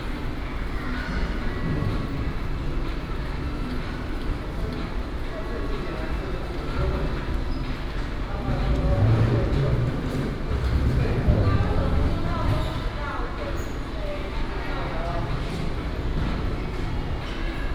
家樂福淡新店, 淡水區, New Taipei City - Escalator and Stroller
In supermarkets, Escalator and Stroller
New Taipei City, Taiwan, 11 March 2016